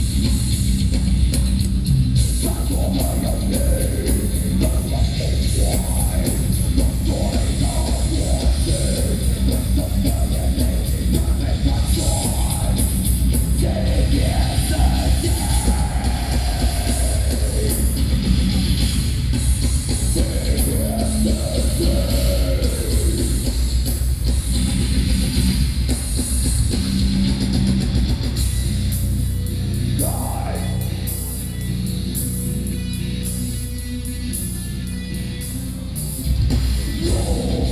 Ketagalan Boulevard, Taipei - against nuclear power
Rock band performing, Sony PCM D50 + Soundman OKM II